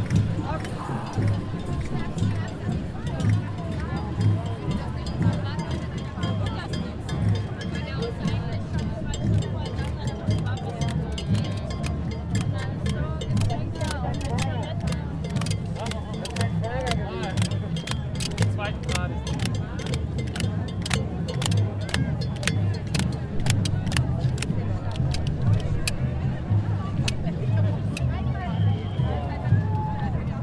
Breslauer Platz, Köln, Deutschland - Geisterzug / Ghosts Parade (Teil / Part 2)
Köln, Germany, 6 February 2016, ~20:00